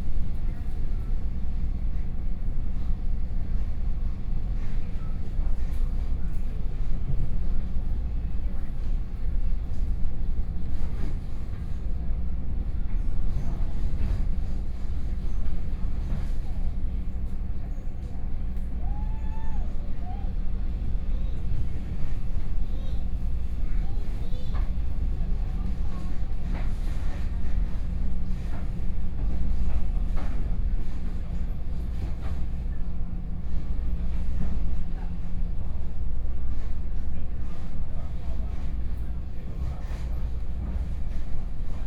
Houli District, Taichung City - Local Train
from Tai'an Station to Fengyuan Station, Zoom H4n+ Soundman OKM II
Taichung City, Taiwan, 8 October 2013, ~11:00